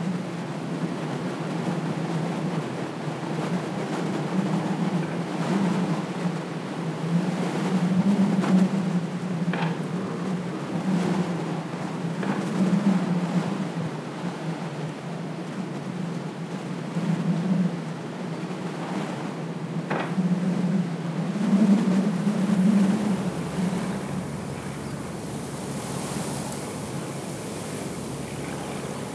Northen wind, Santorini, Grecja - (55) MS Northen wind mix
MS stereo recording of a mix of standard for the season, strong northern winds. I wasn't prepared for such conditions, thus this mix, as many attempts resulted in unusable recordings.
ZoomH2n